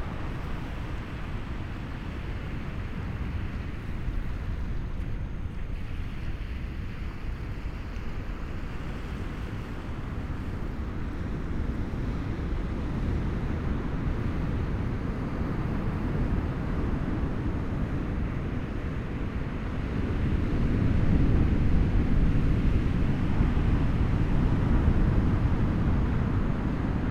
Cooks Landing, Atiu Island, Cookinseln - Pacific at midnight, high tide
Waves on the beach at a place that was signposted "Cooks Landing".It is said that James Cook first set foot on Atiu somewhere in this area. At the night of the recording there were, apart from myself, no intruders. The beach was alive with hermit crabs. The roar of the surf on the outer reef at high tide sets the background for the softer splashing and rushing of the waves on a beach consisting of seashell fragments, coral rabble and coral sand. Dummy head Microphopne facing seaward, about 6 meters away from the waterline. Recorded with a Sound Devices 702 field recorder and a modified Crown - SASS setup incorporating two Sennheiser mkh 20 microphones.
Kūki Āirani, 31 July 2012, 00:08